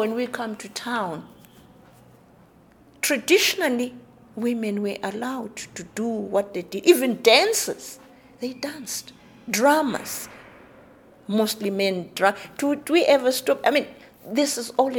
Library of National Gallery, Harare, Zimbabwe - Virginia Phiri talks women’s struggle and creativity…
We are with the writer Virgina Phiri in the open meeting room adjacent to the library of the National Gallery. It’s a bit “echo-y” in here; but the amplified park preachers from Harare Gardens drove as inside. What you are listening to are the final 10 minutes of a long conversation around Virginia’s life as a woman writer in Zimbabwe. Virginia talks about the struggles that women have to go through and encourages her sistaz in the arts to stand strong in their creative production; “We have always done that!.... traditionally women were allowed to do it…!”.
Find the complete interview with Virginia Phiri here: